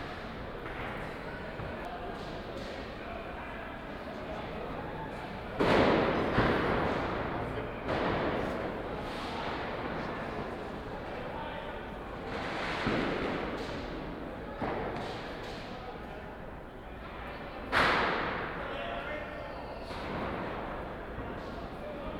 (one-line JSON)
{"title": "Hohestr., Passage", "date": "2008-12-31 23:45:00", "description": "31.12.2008 23:45 Silvester", "latitude": "50.94", "longitude": "6.96", "altitude": "67", "timezone": "Europe/Berlin"}